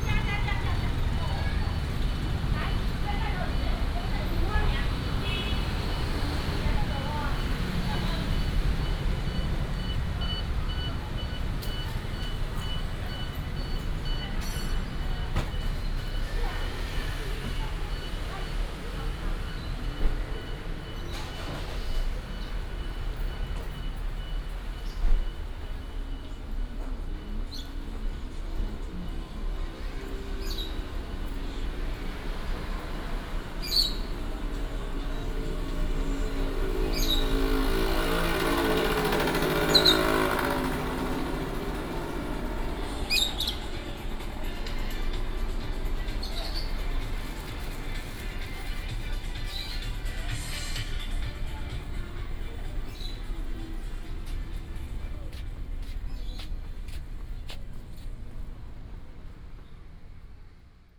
Jianguo Rd., 台中市, Taiwan - Walking on the road
Walking on the streets, Traffic Sound
Taichung City, Taiwan